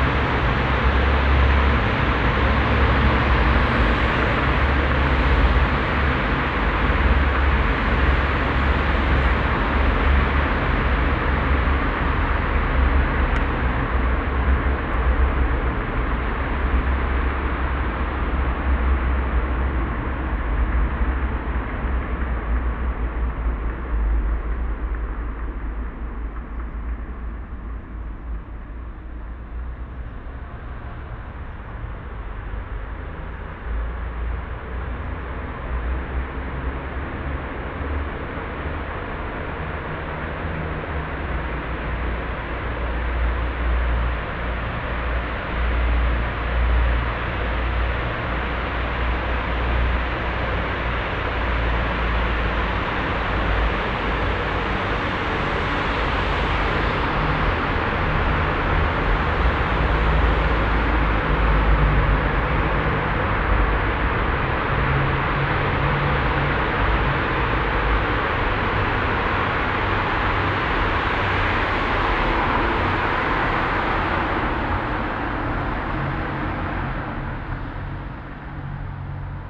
velbert, langenberg, dr.hans karl glinz str, autotunnel
stereo okm aufnahme in autotunnel, morgens
soundmap nrw: social ambiences/ listen to the people - in & outdoor nearfield recordings